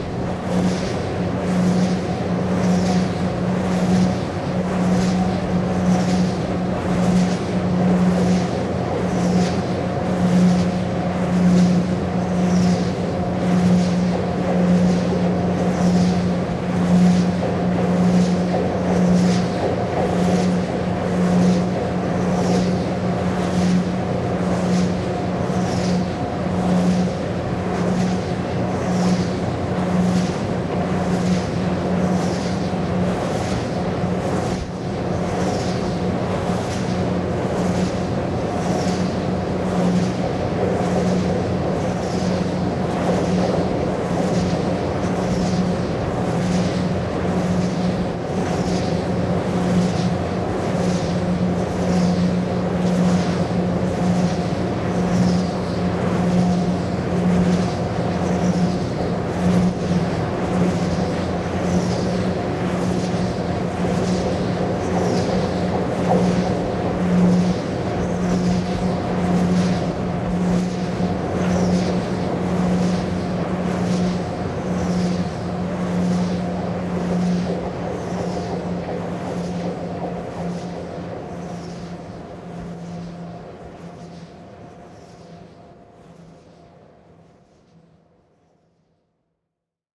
Moult-Chicheboville, France - Windmill
Windmill with strong wind, Zoom H6 and Rode NTG4
Normandie, France métropolitaine, France, 28 November, 17:03